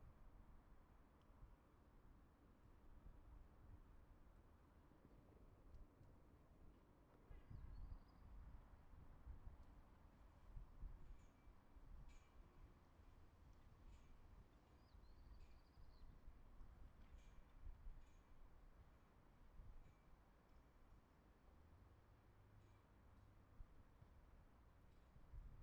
Berliner Vorstadt, Potsdam, Deutschland - Bühne
15 May, 15:26